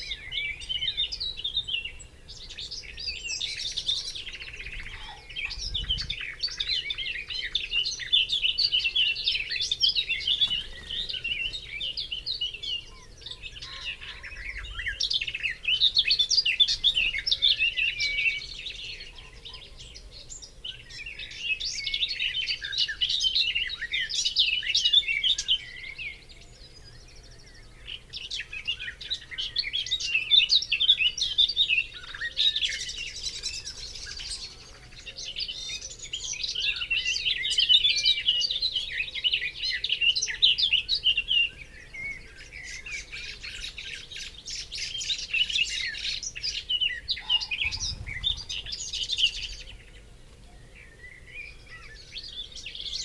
Green Ln, Malton, UK - Garden warbler at dawn ...
Garden warbler at dawn soundscape ... open lavalier mics clipped to hedgerow ... bird song and calls from ... pheasant ... willow warbler ... blackcap ... wood pigeon ... wren ... yellowhammer ... chaffinch ... blackbird ... background noise from planes and traffic ...
6 May 2018